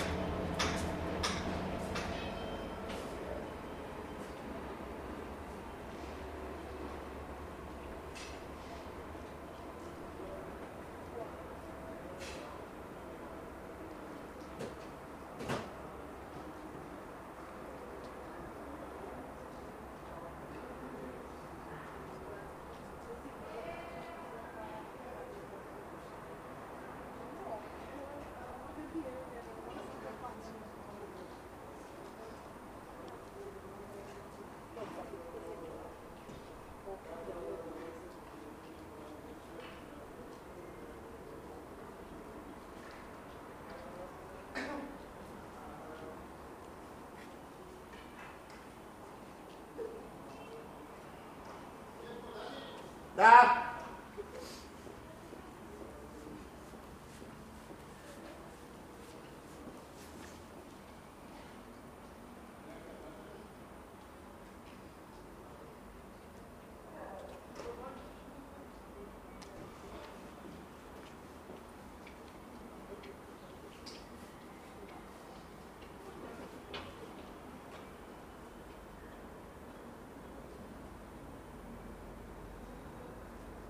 {
  "title": "Istanbul - Berlin: Relocomotivication in Ruse Station",
  "date": "2010-10-28 15:40:00",
  "description": "The express train Istanbul - Bucuresti in the main station of Ruse, waiting for its romanian locomotive to draw it across the Danube. A few moments of pause on a long voyage.",
  "latitude": "43.83",
  "longitude": "25.96",
  "altitude": "61",
  "timezone": "Europe/Sofia"
}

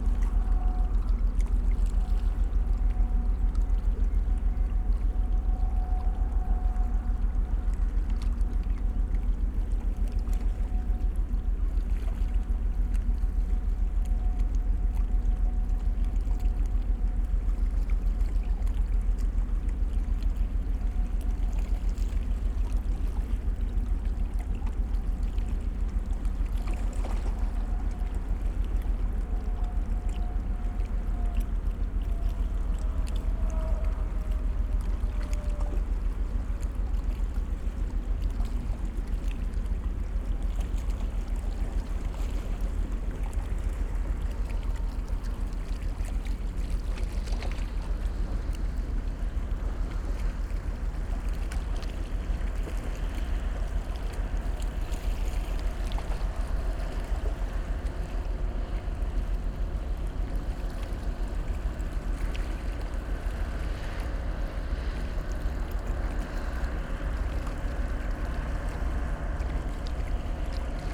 {
  "title": "Rhein river, Niehl, Köln - freighter moving upstream",
  "date": "2013-07-29 19:55:00",
  "description": "cargo ship is moving upstream on the river Rhein.\n(Sony PCM D50, DPA4060)",
  "latitude": "50.99",
  "longitude": "6.97",
  "altitude": "37",
  "timezone": "Europe/Berlin"
}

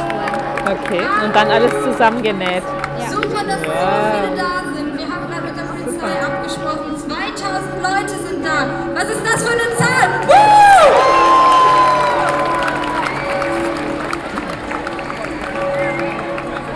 {
  "title": "at the city church, Marktpl., Hamm, Germany - Fridays For Future 20 September 2019",
  "date": "2019-09-20 11:50:00",
  "description": "local sounds of global demonstrations, “Alle fuers Klima”; noon bells of the city church when the demonstration of a record 2000 striking pupils, friends and parents reaches the market for the speeches …\nsee also\nlocal paper 20.09.19",
  "latitude": "51.68",
  "longitude": "7.82",
  "altitude": "65",
  "timezone": "Europe/Berlin"
}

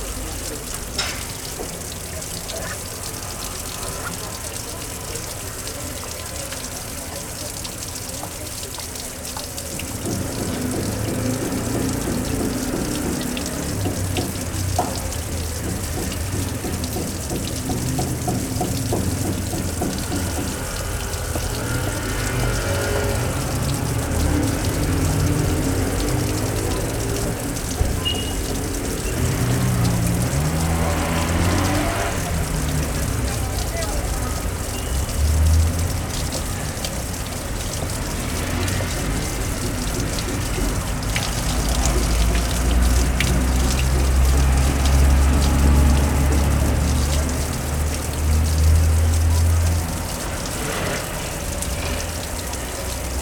{
  "title": "Faubourg St Antoine Paris",
  "date": "2011-04-06 14:34:00",
  "description": "Fontaine eau potable à langle de la rue de Charonne et du faubourg St Antoine - Paris",
  "latitude": "48.85",
  "longitude": "2.37",
  "altitude": "44",
  "timezone": "Europe/Paris"
}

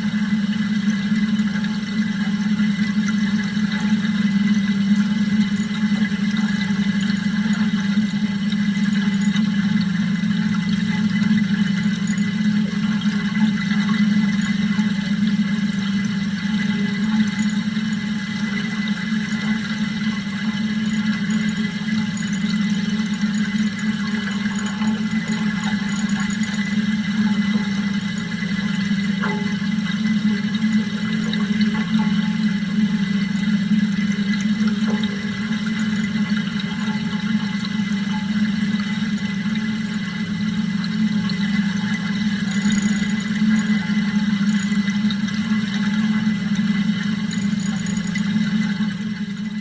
{
  "title": "nearby Ohakvere",
  "date": "2010-07-05 11:40:00",
  "description": "Sediment basin of Estonia oil shale mine. Recorded with contact mics from a tap on one of the pipes.",
  "latitude": "59.22",
  "longitude": "27.47",
  "altitude": "69",
  "timezone": "Europe/Tallinn"
}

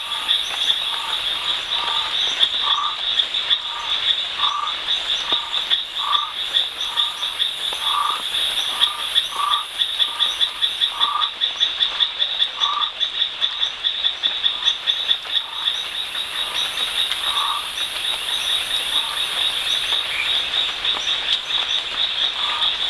{
  "title": "Sambava, Madagaskar - one froggy night @ Marojejy NP",
  "date": "2006-01-07 19:02:00",
  "description": "Marojejy NP is a beautifull parc with friendly guides who know a lot. More than 60 species of frog, several endemic.",
  "latitude": "-14.44",
  "longitude": "49.70",
  "altitude": "1967",
  "timezone": "Indian/Antananarivo"
}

